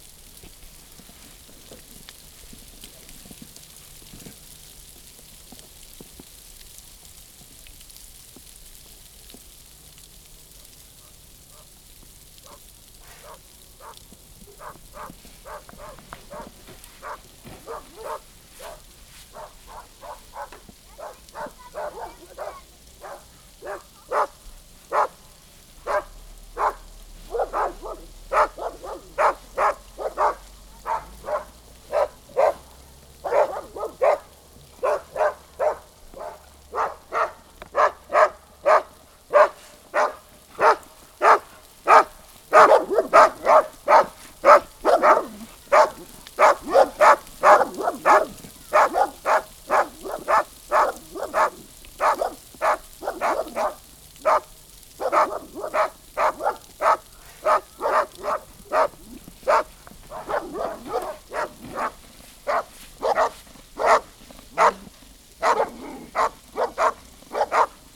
Rataje nad Sázavou, Česko - sníh a psi
procházks ve sněhu pod Pirksteinem kolem psů